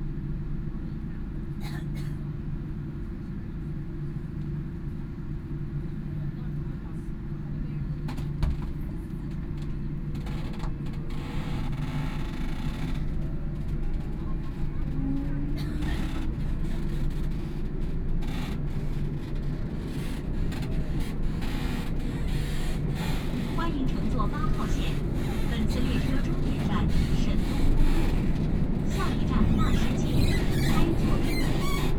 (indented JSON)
{
  "title": "Huangpu District, Shanghai - Line 8(Shanghai Metro)",
  "date": "2013-12-03 12:47:00",
  "description": "from Qufu Road station to Dashijie station, Binaural recording, Zoom H6+ Soundman OKM II",
  "latitude": "31.24",
  "longitude": "121.47",
  "altitude": "11",
  "timezone": "Asia/Shanghai"
}